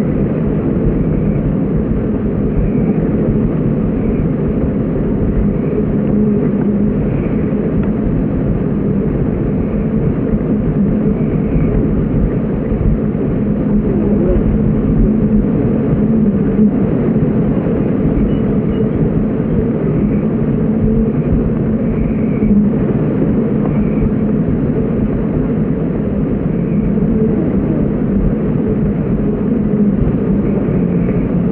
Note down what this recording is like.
Sound recording of infra vibrations of Bees through the wooden plate in the Beehive with Contact Adapter. - Handheld Recorder TASCAM DR100-MKIII, - Aquarian Audio H2a XLR Hydrophone with Contact Adapter, Compared to previous recordings, when I connected the magnetic contact microphone directly on the metal net in the Beehive, this time I put the contact mic on the wooden plate above the bees boxes, where it was a perfect place for recording infra healing vibrations of bees.